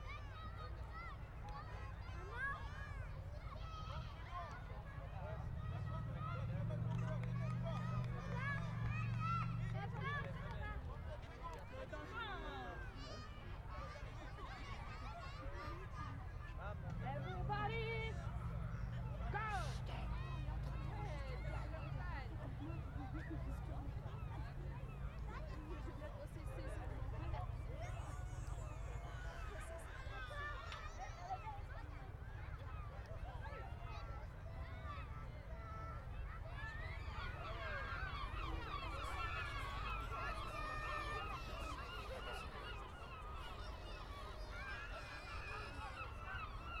Le Touquet-Paris-Plage, France - Le Touquet - Plage
Le Touquet
Ambiance de plage un dimanche d'hiver ensoleillé
France métropolitaine, France, 17 February 2019